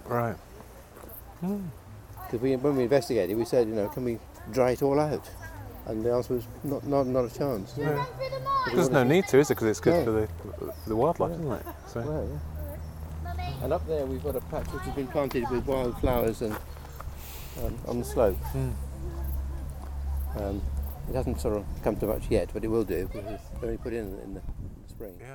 Walk Three: Wet part of the valley
Plymouth, UK, 4 October 2010